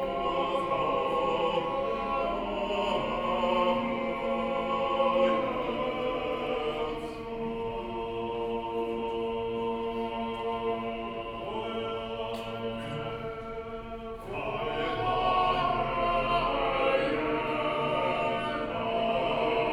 walking out of the platz, Street music, Tourists and pedestrians
Munich, Germany, 11 May 2014, 12:22